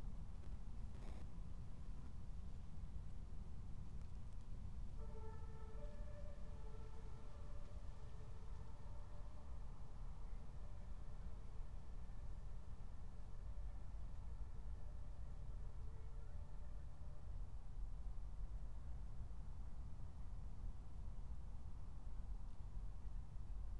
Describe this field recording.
23:03 Berlin, Tempelhofer Feld